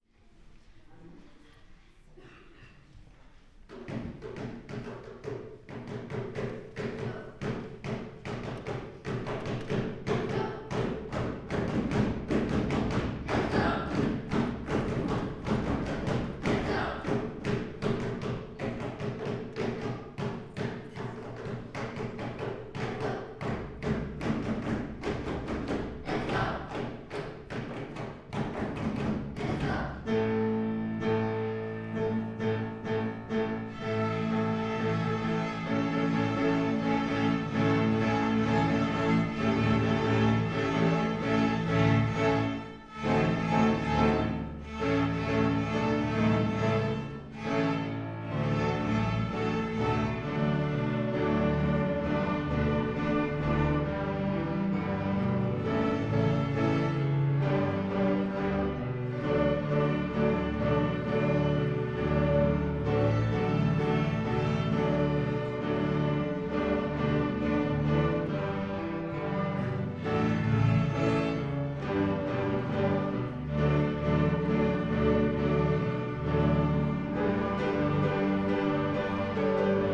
string concert of 5th class, most of them play an instrument only since a few months, but enjoy it quite a lot
(Sony PCM D50, Primo Em172)
24 January, ~17:00